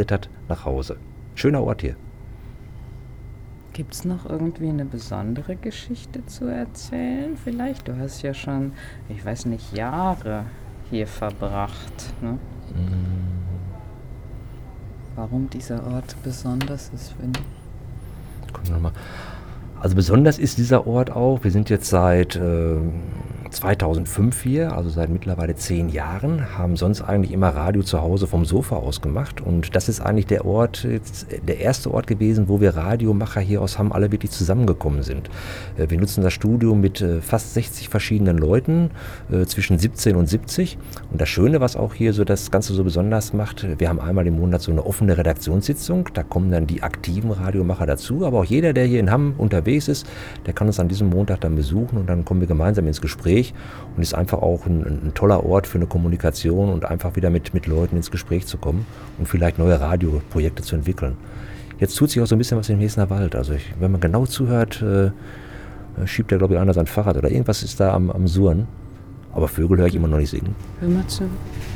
St. Barbara-Klinik Hamm-Heessen, Am Heessener Wald, Hamm, Germany - Ralf Grote - radio at the edge of the forest...
We are with Ralf Grote, behind a window of the “St Barbara Hospital”… or better, one of the large windows of a Radio studio of the “Bürgerfunk” (community radio) of the city. What makes this place so special that Ralf can be found here, often on several evening of the week, after work, making radio. Ralf beginning to tell, and opens the window to the forest…
The “Radio Runde Hamm” (RRH) is an open studio, where residents can come to make Radio programmes, or learn how to do it. A group of radio-enthusiasts between 17 and 70 years old is “running the show”, assisting and training new-comers. Ralf Grote is part of this since 1999, today he’s the studio manager…
Wir stehen mit Ralf Grote an einem Fenster der “Barbaraklinik” … oder besser, des Studios der Radio Runde Hamm. Was macht diesen Ort so besonders, dass Ralf hier mehrmals in der Woche abends, nach getaner Arbeit noch hier zu finden ist…? Ralf erzählt und öffnet das Fenster zum Wald….